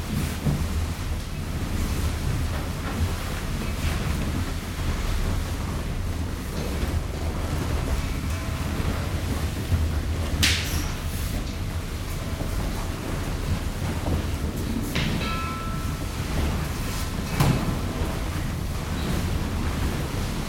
mystery sound from dance workshop